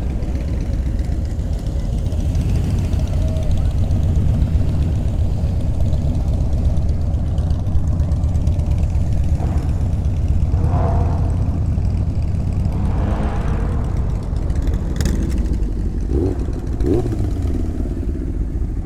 Mile Square, Indianapolis, IN, USA - Binaural Bicycling
Binaural recording of riding a bicycle on Meridian St. in Indianapolis. Heading south down around the circle and continuing down to the Wholesale District. Best listened to with headphones to get the maximum binaural effect.
Sony PCM-M10
Audiotalaia Omnidirectional Microphones (binaural)
Sound Forge - fades